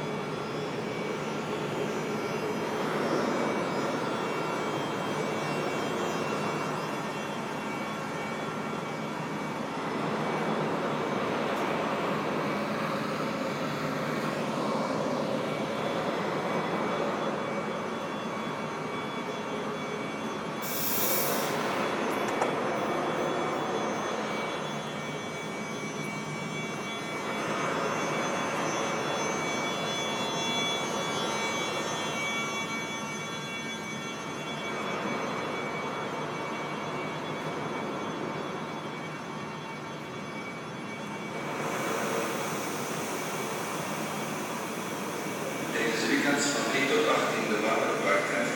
Very heavy construction works in the Oostende station, cold and foggy weather. On the platform 5 a train is leaving the station to Eupen.